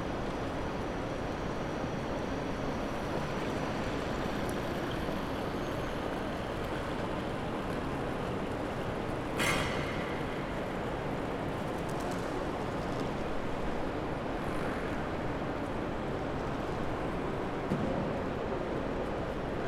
Frankfurt Hauptbahnhof 1 - Halle
This is the first of a series of recordings that document the change of sound in the station during the so called 'Corona Crisis'. It is unclear if it will become audible that there is less noise, less voices than normal. But at least it is a try to document this very special situation. This recording starts on the B-level, where drugs are dealt, the microphone walks to an escalator to the entrance hall. Voices, suitcases, birds.
Hessen, Deutschland, 21 March 2020, 12:07